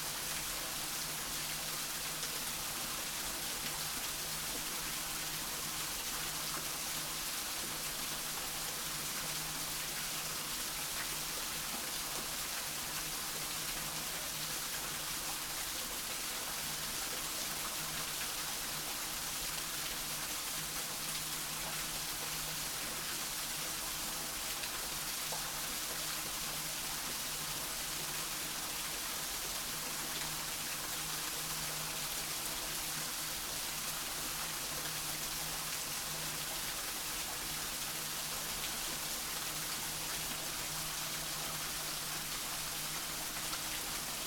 This is the sound of the cascade inside the Costa Rica Glasshouse rainforest at the Cleveland Botanical Garden. Recorded on the Sony PCM-D50.
Ohio, United States, 31 January 2022